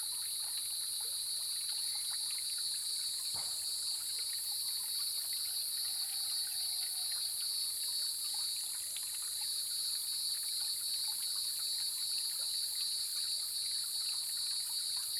種瓜坑溪, 成功里 Puli Township - early morning
Insects sounds, Cicadas cry, Sound of water, Chicken sounds
Zoom H2n Spatial audio